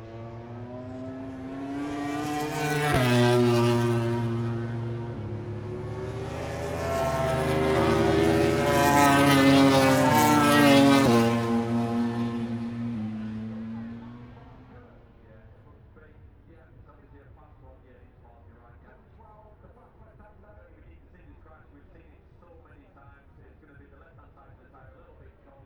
Silverstone Circuit, Towcester, UK - british motorcycle grand prix 2021 ... moto grand prix ...
moto grand prix free practice three ... copse corner ... olympus ls 14 integral mics ...
England, United Kingdom, 28 August 2021